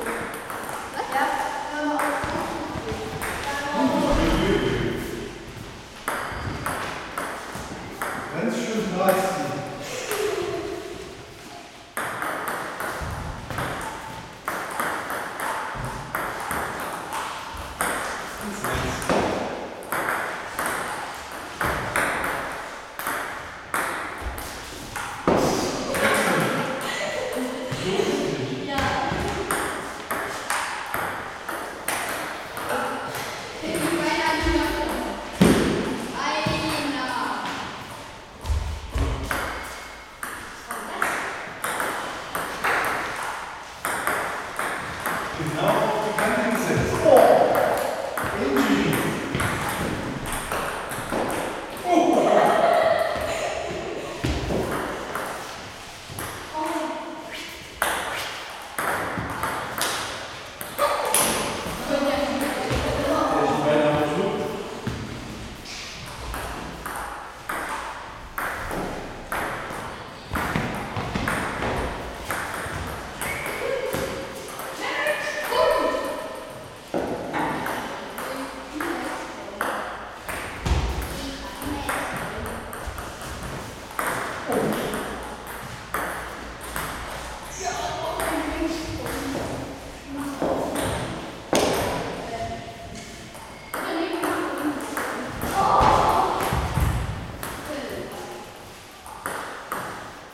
kjz big palais, gotha, tischtennis im saal - tischtennis im saal
kinder spielen tischtennis im saal des kinder- und jugendzentrums big palais.